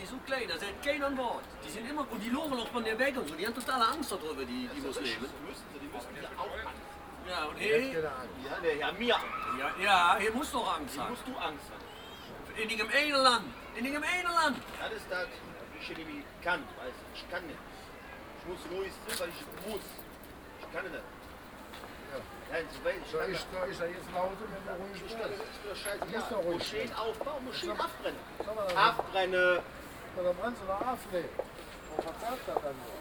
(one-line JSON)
{"title": "cologne, Burgmauer, Taxifahrer Konversation - cologne, burgmauer, taxistand", "date": "2008-04-09 12:36:00", "description": "Taxifahrer am Stand, Konversation im oeffentlichen Raum - Thema hier:Islam und Moschee in Koeln\nproject: social ambiences/ listen to the people - in & outdoor nearfield recordings", "latitude": "50.94", "longitude": "6.96", "altitude": "60", "timezone": "Europe/Berlin"}